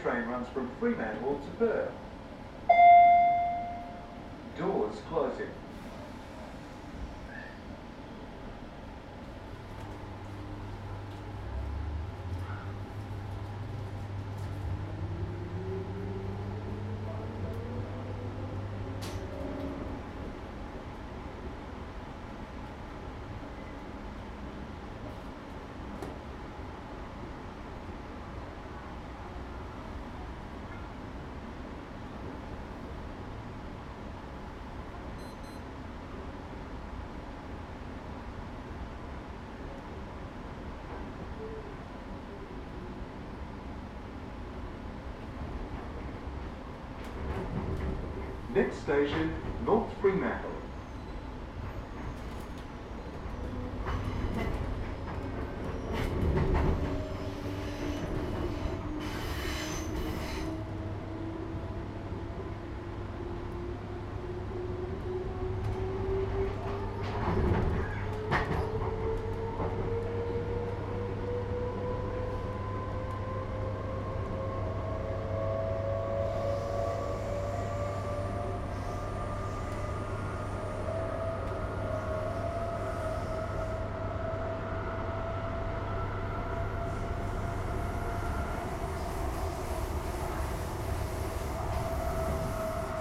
Fremantle Station, Perth, Western Australia - Taking a Train From Fremantle to North Fremantle
Taking the train from Fremantle to North Fremantle. Familiar sounds to the locals.